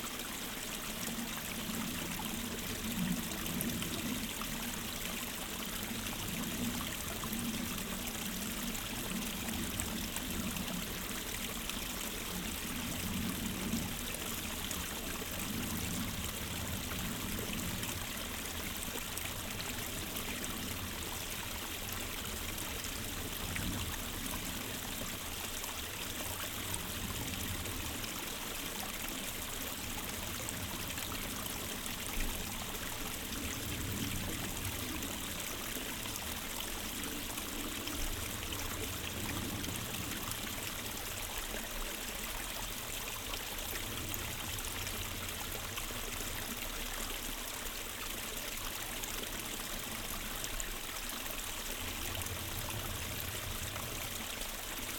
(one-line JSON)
{"title": "Utena, Lithuania, hidden streamlets", "date": "2022-02-03 15:20:00", "description": "Sennheiser ambeo headset. Standing at the hidden streamlets", "latitude": "55.49", "longitude": "25.59", "altitude": "106", "timezone": "Europe/Vilnius"}